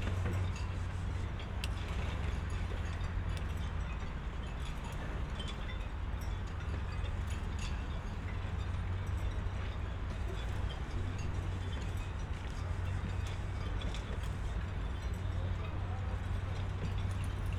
marina, Berlin Wannsee - ringing rig, marina ambience
marina, Wannsee, Berlin. sailboat's rig is ringing, people try to lift boat into the water by a small crane
(SD702, BP4025)
Berlin, Germany, 6 September 2014, 12:45